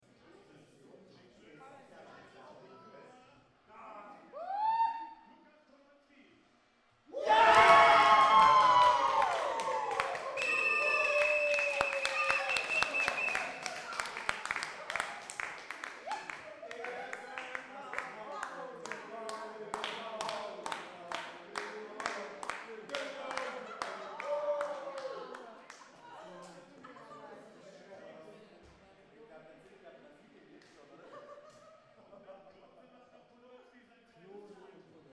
Cologne - Goooooooaaaaaaal at the FIFA World Cup 2010
Watching the World Cup game England vs. Germany when Lukas POdolski strikes 2:0